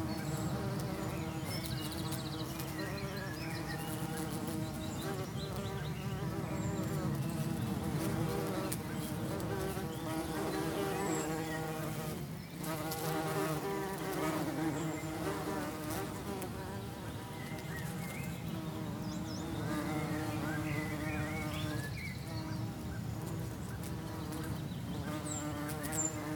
I was sitting in the sunshine outside when I noticed the fuscia bush was humming with the sound of bees. I mounted the recorder on a large tripod and set it so the microphone was in the flowers surrounded by the bees. I don't remember exactly what time it was but it was as the sun was nearing the horizon.